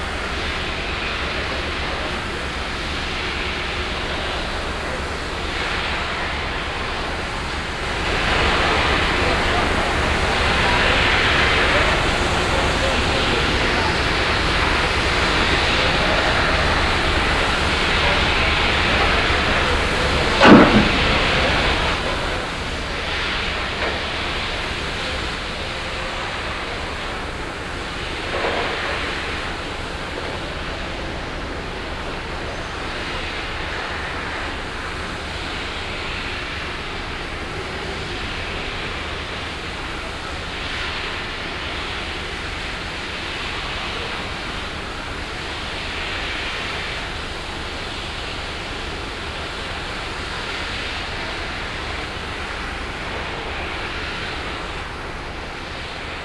langenfeld, steel factory
industrial production - recording inside a factory hall of the steel company schmees - here: abspritzen der stahlform mit wasserdrucksstrahl
soundmap nrw/ sound in public spaces - in & outdoor nearfield recordings
Langenfeld, Germany, 22 July, 13:40